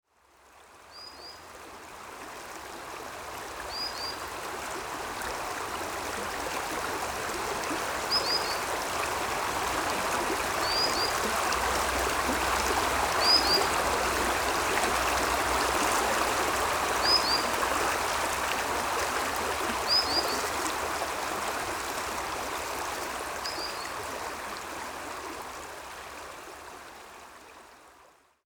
Scottish Borders, UK - Leaderfoot
On a hot world listening day, welcome cool of Leaderfoot.
The busy A68 almost out of earshot
United Kingdom, European Union, 18 July 2013, 15:00